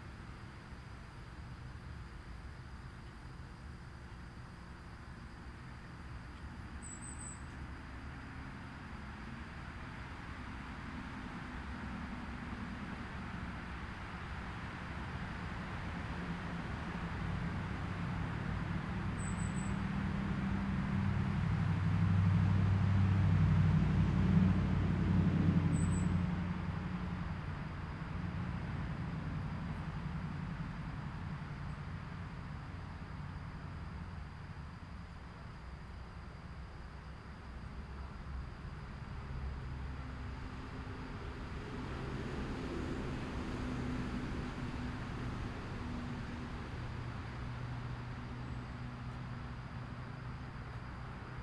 Titusville, Hopewell Township, NJ, USA - Radio aporee
This was recorded by Washington's crossing on the Delaware river.